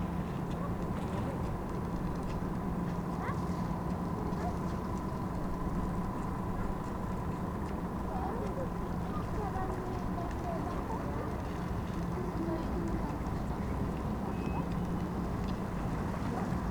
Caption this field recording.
mother and child feed ducks, cars crossing the bridge, a towboat passes by on the oder river, accordion music and an old metal swing squeaking in the distance, the city, the country & me: january 3, 2014